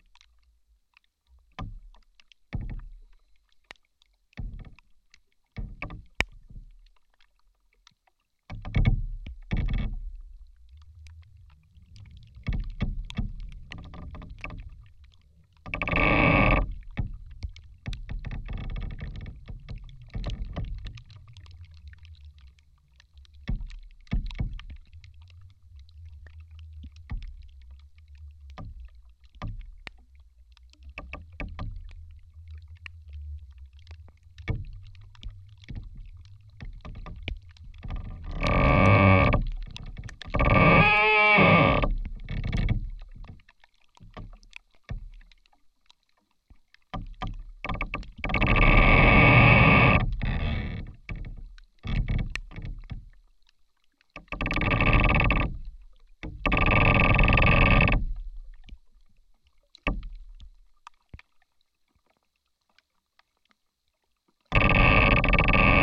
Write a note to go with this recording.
A rotten tree, creaking and squeaking from being moved by the wind. A slight rain is also heard falling on the tree's surface, but it all calms down towards the end. Recorded with 4 contact microphones and ZOOM H5.